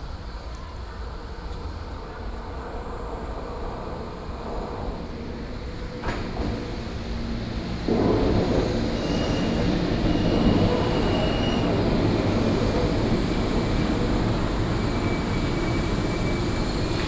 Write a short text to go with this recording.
Train arrival and departure, Near rail station, Train traveling through, Sony PCM D50+ Soundman OKM II